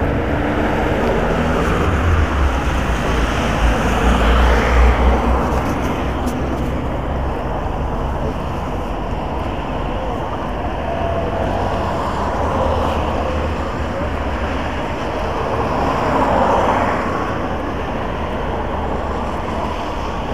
Советский район, Нижний Новгород, Нижегородская область, Россия - street sound